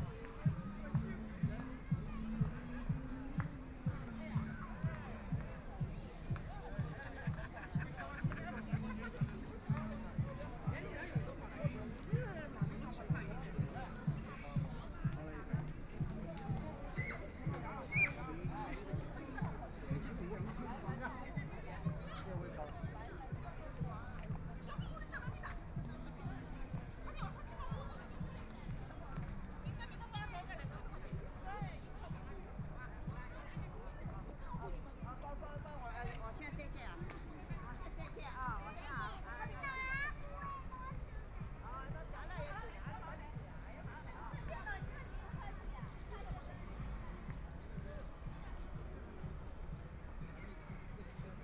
Penglai Park, Huangpu District - Walking through the park
Walking through the park, Binaural recording, Zoom H6+ Soundman OKM II
Shanghai, China, 26 November, 16:47